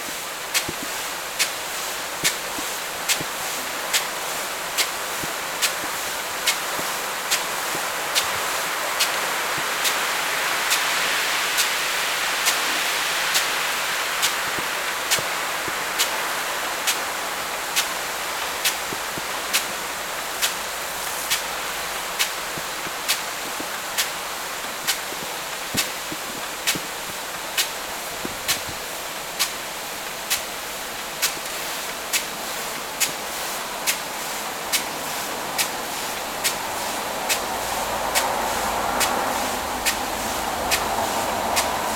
Póvoa de Varzim, Portugal - Corn watering Balazar
Watering of corn at night, some cars, Zoom H6